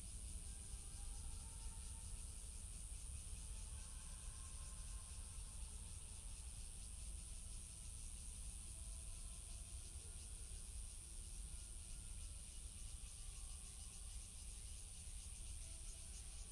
Ln., Sec., Yimin Rd., Xinpu Township - Near the tunnel
Near the tunnel, birds call, Cicadas sound, High speed railway, The train passes through, Zoom H6 XY